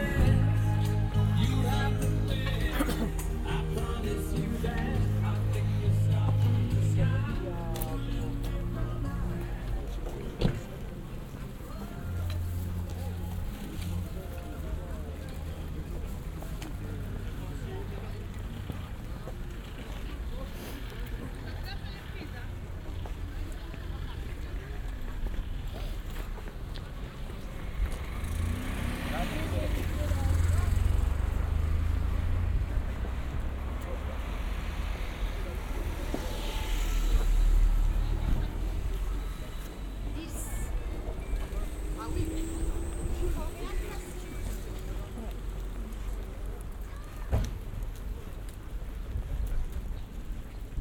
Tournai, Belgium - Sunday Flea Market
Tournai flea market on Sunday morning
Wallonie, België / Belgique / Belgien, February 2022